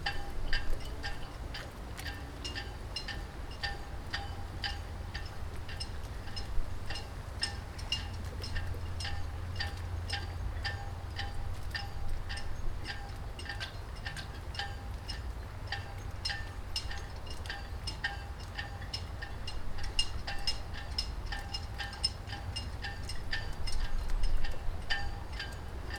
{
  "title": "small marina Rohel, Oldeouwer, Nederland - wind and boats",
  "date": "2012-05-12 11:34:00",
  "description": "small marina at the shore oif Lake Tjeuke, largest lake in Fryslan (except Ijsselmeer ofcourse), where the wind is blowing through the cables. Recorded with Zoom4",
  "latitude": "52.91",
  "longitude": "5.81",
  "timezone": "Europe/Amsterdam"
}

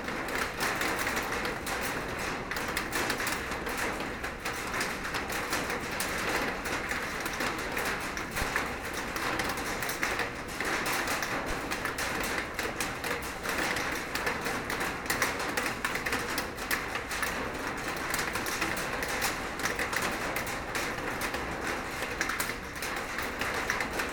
{
  "title": "Seraing, Belgique - Rain",
  "date": "2017-03-18 11:00:00",
  "description": "In the abandoned coke plant, rain is falling on a huge metal plate, it's windy and very bad weather. Drops falling from the top of the silo are large.",
  "latitude": "50.61",
  "longitude": "5.53",
  "altitude": "66",
  "timezone": "Europe/Brussels"
}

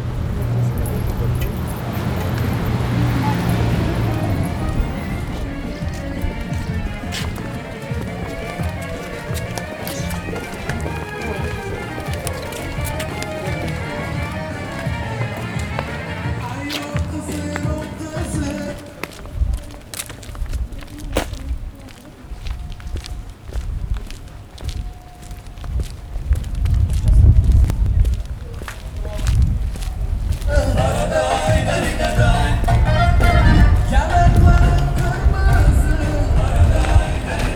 {
  "title": "Lenina St., Bahkchsysaray, Crimea, Ukraine - Streetlife. until the muezzin calls.",
  "date": "2015-07-17 13:00:00",
  "description": "Walking along the mainstreet around midday with my friend and the zoom-recorder. There is traffic, there is the rinse, we pass the famous Hun-palace on Lenina street, tourists check out the 5 stall-market, kids play the birdwhistle, a barbecue-kafe does a soundcheck next to a busy bus stop, pushkin and the street dogs greet from a memorial, one out of a 100 russian flags dance in the mild wind, a single aeroplane passes the sanctioned sky until the muezzin of the mosque starts one of his last calls before the evening celebrations of Orazabayram.",
  "latitude": "44.75",
  "longitude": "33.88",
  "altitude": "205",
  "timezone": "Europe/Simferopol"
}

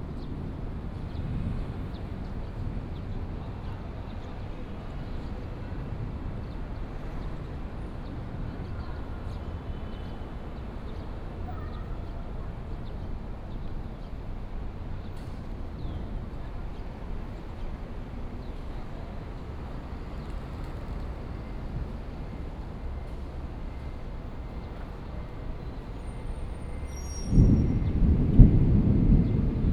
{
  "title": "Bitan, Xindian District, New Taipei City - Thunder",
  "date": "2015-07-28 15:00:00",
  "description": "Sitting on the embankment side, Viaduct below, Thunder",
  "latitude": "24.96",
  "longitude": "121.54",
  "altitude": "25",
  "timezone": "Asia/Taipei"
}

